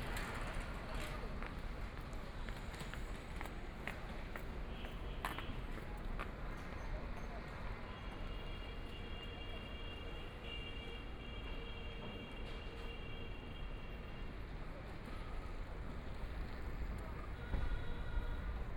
Tianjin Road, Shanghai - Walking on the street
Walking on the street, Binaural recording, Zoom H6+ Soundman OKM II
Shanghai, China, 7 December 2013, 13:18